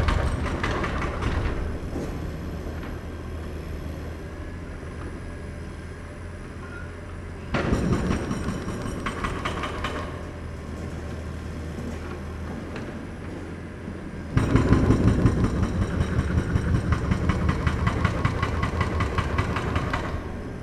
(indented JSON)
{
  "title": "berlin: liberda-/manitiusstraße - the city, the country & me: demolition of a supermarket",
  "date": "2012-02-03 12:49:00",
  "description": "excavator with mounted jackhammer demolishes the foundation of a supermarket\nthe city, the country & me: february 3, 2012",
  "latitude": "52.49",
  "longitude": "13.43",
  "altitude": "40",
  "timezone": "Europe/Berlin"
}